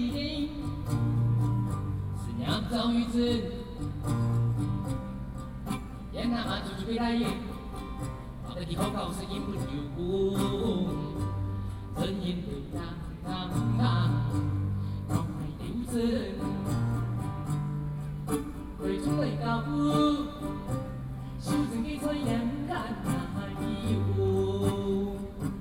Protest, Hakka singer, Zoom H4n+ Soundman OKM II
台北市 (Taipei City), 中華民國, 26 May